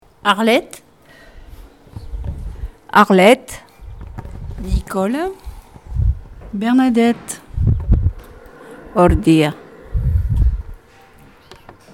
20 avenue Jean Moulin
Espace Multimédia Itinérant